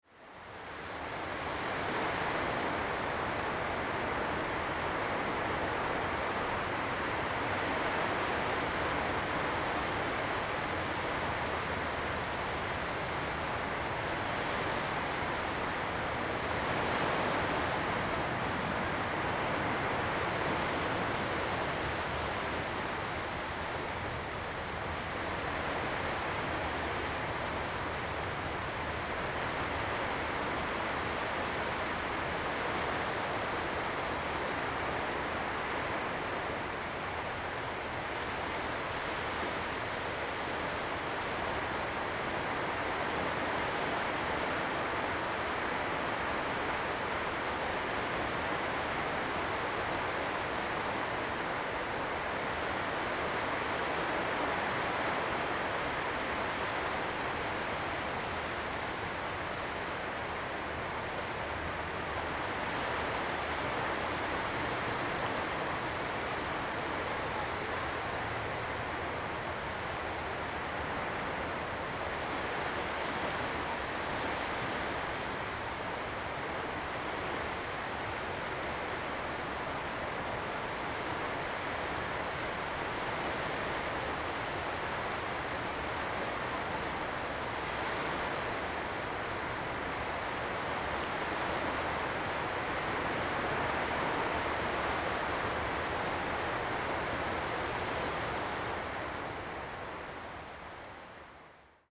沙崙海灘, New Taipei City - the waves
At the beach, the waves
Zoom H2n MS+XY
Tamsui District, New Taipei City, Taiwan